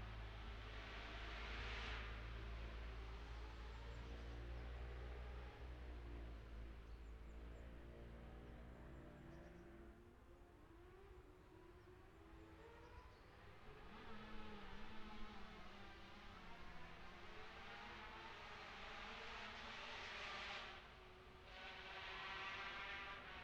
Scarborough, UK - motorcycle road racing 2012 ...
125-400cc practice two stroke/four stroke machines ... Ian Watson Spring Cup ... Olivers Mount ... Scarborough ... binaural dummy head recording ... grey breezy day ...